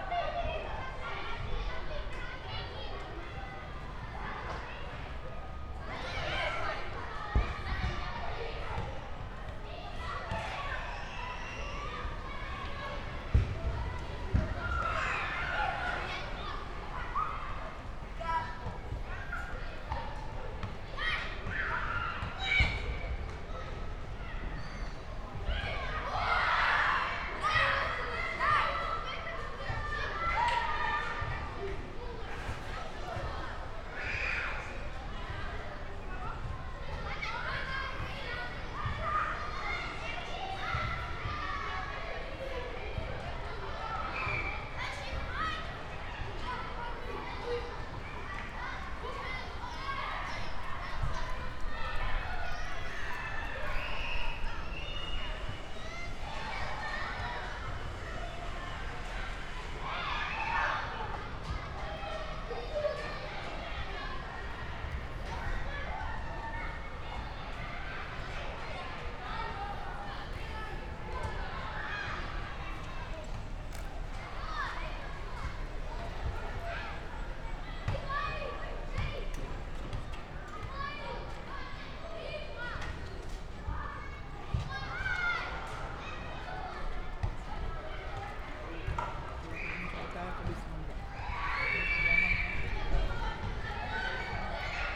Brillschoul, Rue Zénon Bernard, Esch-sur-Alzette, Luxemburg - schoolyard ambience
Schoolyard of Brillschoul, break, early afternoon
(Sony PCM D50, Primo EM272)